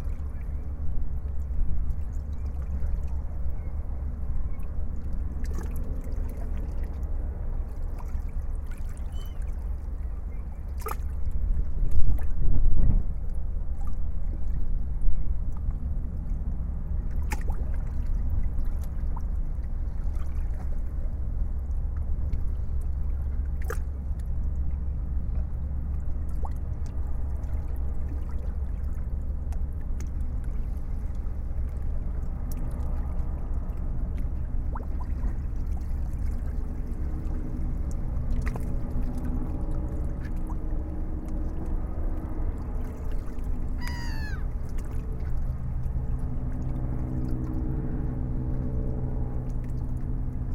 porto di Palermo (Romanlux) 6/2/10 h 10,30
mare tranquillo con gabbiani e nave che parte. (EDIROL R-09hr)